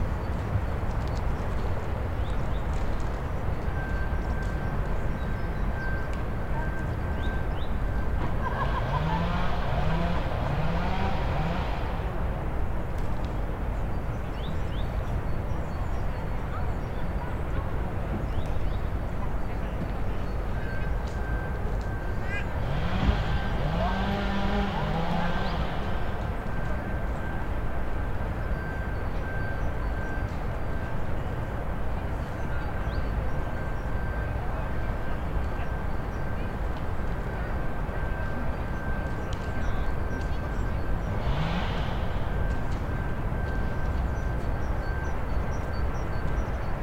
{"title": "All. Charles Dénat, Toulouse, France - the Japanese garden in winter", "date": "2022-01-14 10:20:00", "description": "the Japanese garden in winter, footsteps, walkers\nthe city in the background and a chainsaw in the park\nCaptation : ZOOM H6", "latitude": "43.61", "longitude": "1.43", "altitude": "142", "timezone": "Europe/Paris"}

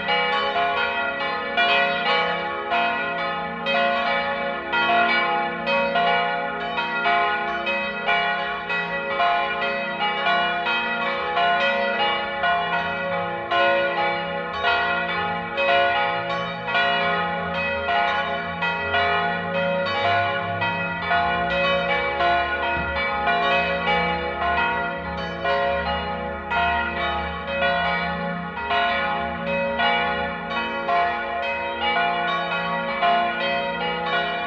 {"title": "velbert, nevigeser strasse, friedenskirche, churchbells - velbert, nevigeser strasse, friedenskirche, glocken im nebel", "date": "2008-07-03 13:47:00", "description": "churchbells during a foggy sunday morning in the spring of 2007\nchurchbells during a foggy sunday morning in the spring of 2007\nproject: :resonanzen - neanderland - soundmap nrw: social ambiences/ listen to the people - in & outdoor nearfield recordings, listen to the people", "latitude": "51.33", "longitude": "7.06", "altitude": "261", "timezone": "Europe/Berlin"}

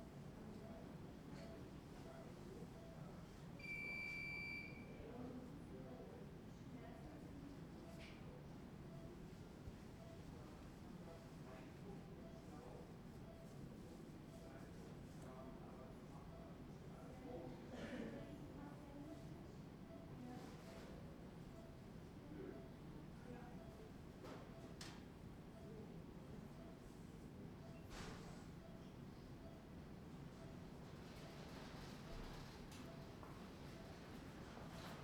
{"title": "berlin, urban hospital - x-ray department", "date": "2010-01-10 23:45:00", "description": "Urbankrankenhaus / Urban-hospital\nwaiting for x-ray in the hallway", "latitude": "52.49", "longitude": "13.41", "altitude": "38", "timezone": "Europe/Berlin"}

